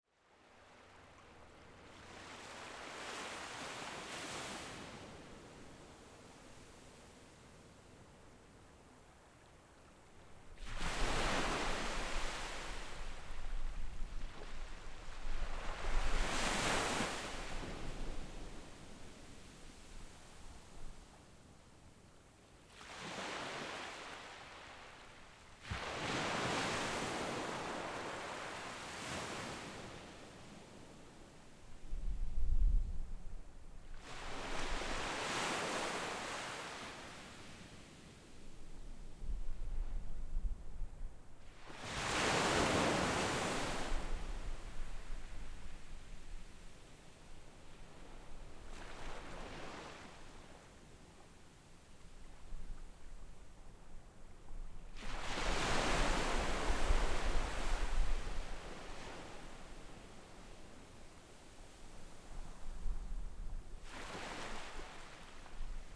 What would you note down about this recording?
Sound of the waves, Very hot weather, In the beach, Zoom H6 XY